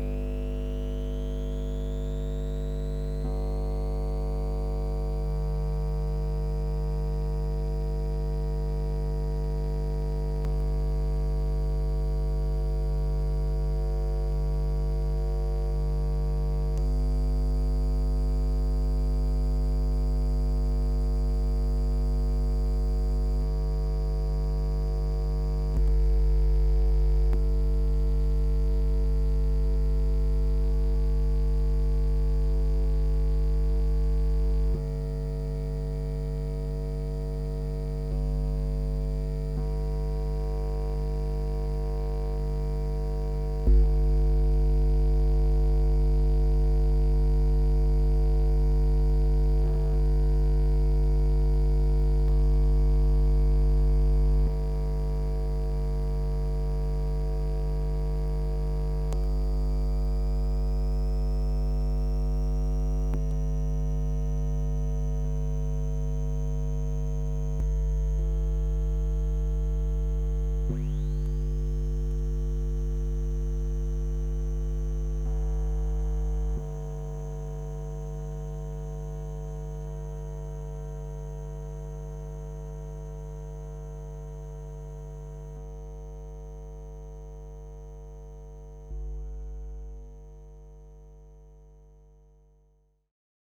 Utena, Lithuania, electric substatiom
small electric substation in the meadow. the first part of the recording - the ambience around, the second part - a close-up examination of electric field with coil pick-ups.
30 May